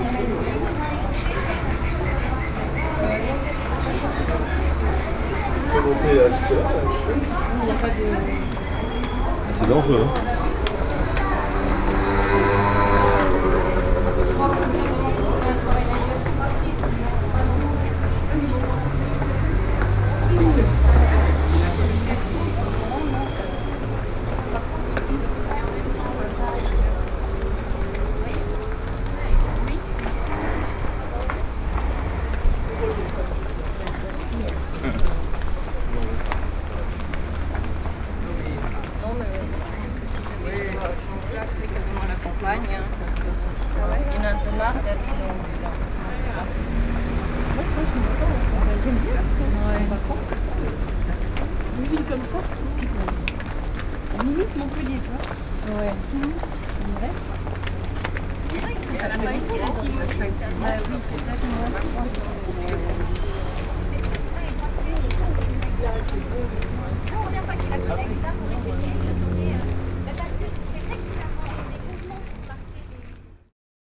Cahors, Pont Valentré / Cahors, the medieval Valentré Bridge.